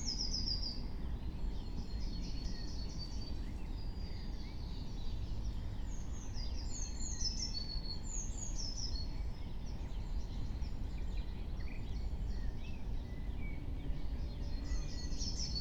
08:50 Berlin, Buch, Mittelbruch / Torfstich 1 - pond, wetland ambience
late morning ambience
Deutschland, 15 May 2021